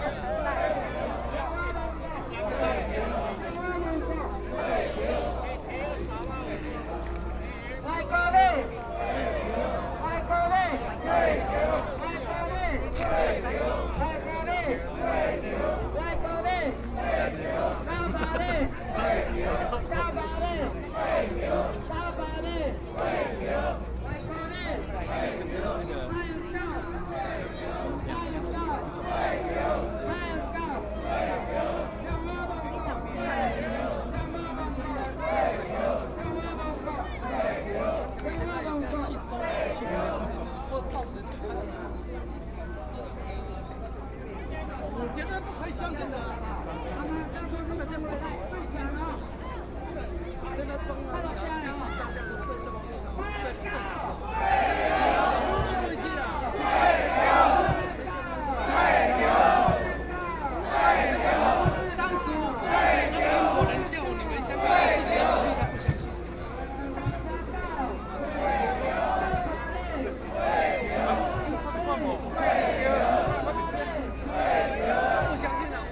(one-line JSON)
{"title": "East Gate of Taipei City - Anger", "date": "2013-10-10 10:32:00", "description": "Protesters, Shouting slogans, Binaural recordings, Sony Pcm d50+ Soundman OKM II", "latitude": "25.04", "longitude": "121.52", "altitude": "12", "timezone": "Asia/Taipei"}